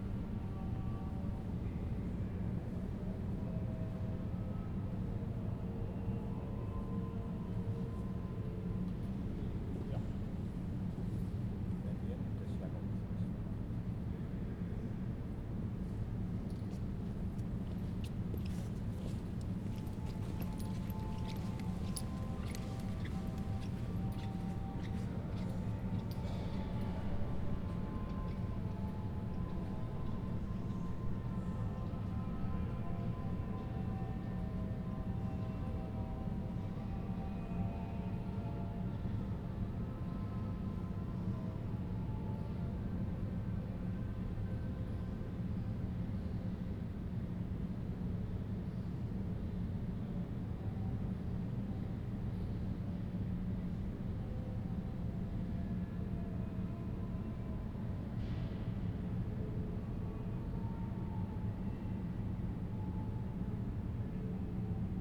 [Zoom H4n Pro] Inside the St. Rombouts cathedral during visiting hours. Music playing, hot air blowing, visitors passing by.